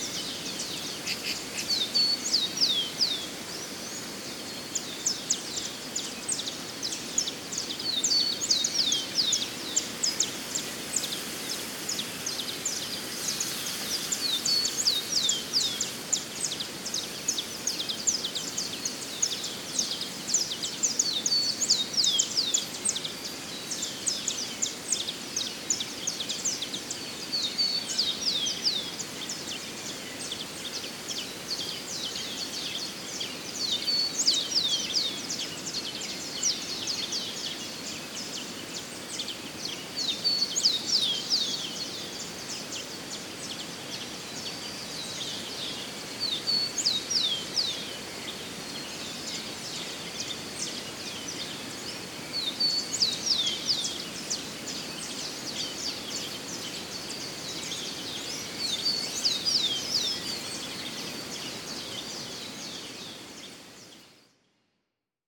Muriqui Track - aurora
recording in the Atlantic Forest by the sunrise, hoping to hear the muriqui monkey, a highly endangered species